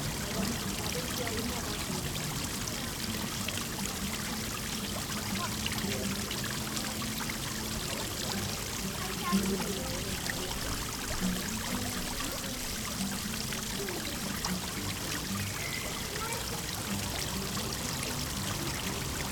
Leuschnerdamm, Engelbecken - indischer Brunnen / indian fountain
indischer Brunnen im Engelbecken, Kinder, Musiker spielt Gitarre.
Der Luisenstädtische Kanal ist ein historischer innerstädtischer Kanal in der Berliner Luisenstadt, der die Spree mit dem Landwehrkanal verband. Er wurde 1852 eröffnet und verlief durch die heutigen Ortsteile Kreuzberg und Mitte. 1926 wurde der Kanal teilweise zugeschüttet und in eine Gartenanlage umgestaltet. Mit dem Mauerbau im Jahr 1961 verlief bis 1989 entlang des nördlichen Teils des Kanals die Grenze zwischen Ost- und West-Berlin. Seit 1991 wird die seit dem Zweiten Weltkrieg zerstörte Gartenanlage abschnittsweise rekonstruiert.
indian fountain at Engelbecken, former Louisenstadt canal, children, musician